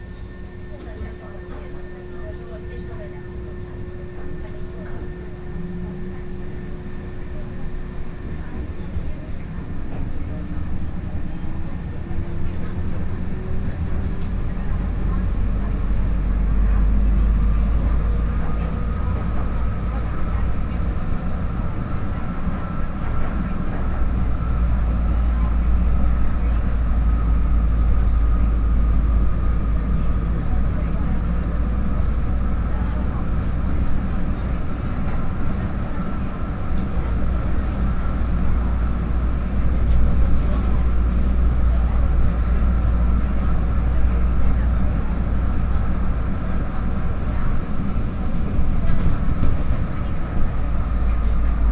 內湖區紫陽里, Taipei City - Neihu Line (Taipei Metro)
from Gangqian Station to Wende Station, then Go outside to the station, Traffic Sound
Please turn up the volume a little. Binaural recordings, Sony PCM D100+ Soundman OKM II
Neihu District, Taipei City, Taiwan, 12 April 2014, 19:34